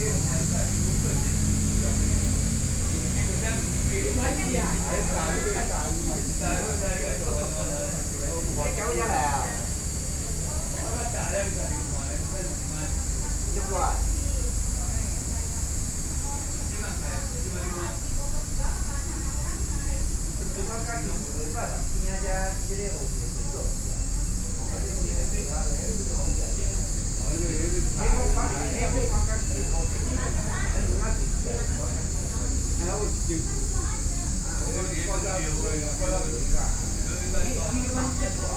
Traffic Sound, A group of elderly people chatting, Cicadas sound, Hot weathe
Sony PCM D50+ Soundman OKM II